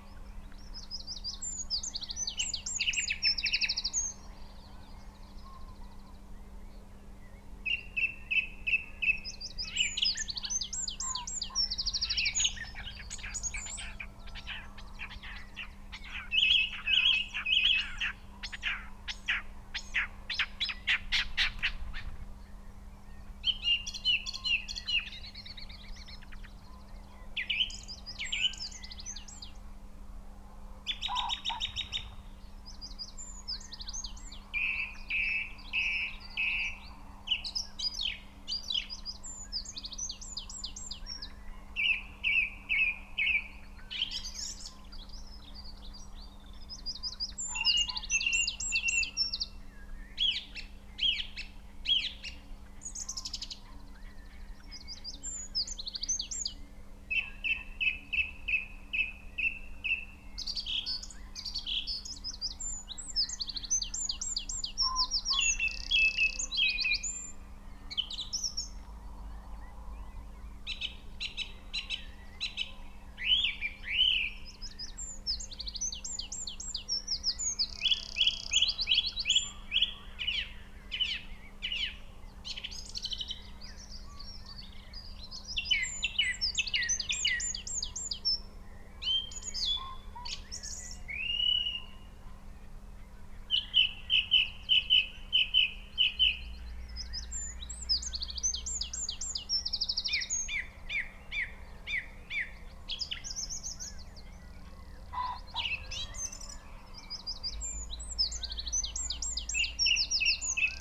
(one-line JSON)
{"title": "Green Ln, Malton, UK - song thrush soundscape ...", "date": "2020-04-04 05:30:00", "description": "song thrush soundscape ... xlr mics in a SASS on tripod to Zoom H5 ... bird calls ... song ... from ... pheasant ... blackbird ... red-legged partridge ... grey partridge ... skylark ... crow ... tawny owl ... wood pigeon ... robin ... dunnock ... yellowhammer ... long-tailed tit ...plus background noise ... the skies are quiet ...", "latitude": "54.13", "longitude": "-0.54", "altitude": "80", "timezone": "Europe/London"}